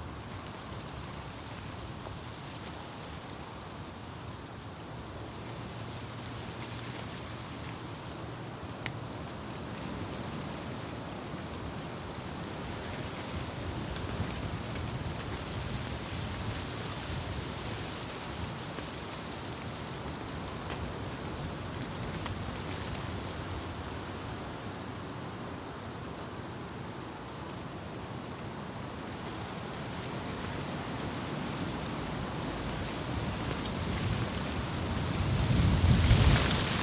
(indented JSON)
{
  "title": "Immerath, Erkelenz, Germany - Leaves blowing beside Immerath church",
  "date": "2012-11-01 13:47:00",
  "description": "Immerath is a condemned village. In around 5 years the massive Garzweiler mine will swallow this land and the motorway nearby. People are already negotiating compensation with the company concerned (RWE AG) and moving out. 4 centuries of its history will disappear utterly to exist only in memory.",
  "latitude": "51.05",
  "longitude": "6.44",
  "altitude": "96",
  "timezone": "Europe/Berlin"
}